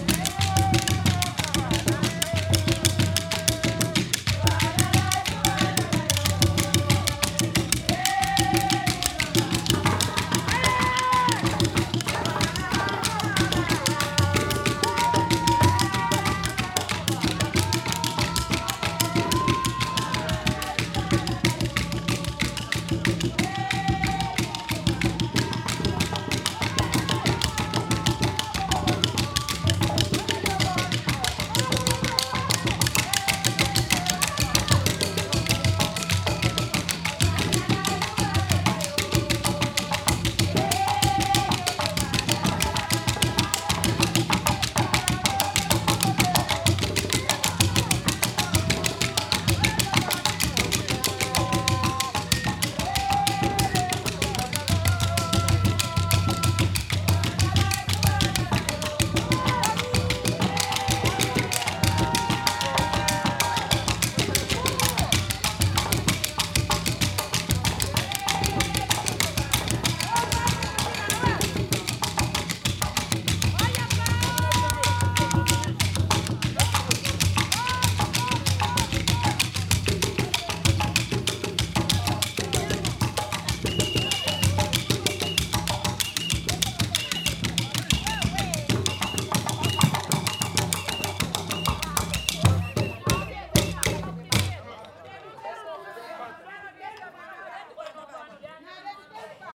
Av. José de Almada Negreiros Lte 3 5ºdto - Traditional wedding of Guinea-Bissau
Guiné-Bissau traditional wedding recording with a traditional musical group